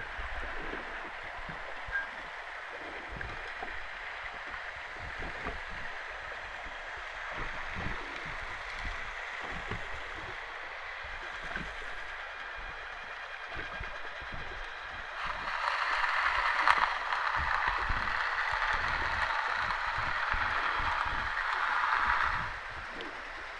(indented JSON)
{
  "title": "Venice, Italy - Canal hidrophone recording",
  "date": "2012-09-18 14:24:00",
  "description": "underwater vibrations of boat traffic and people walking",
  "latitude": "45.43",
  "longitude": "12.33",
  "altitude": "8",
  "timezone": "Europe/Rome"
}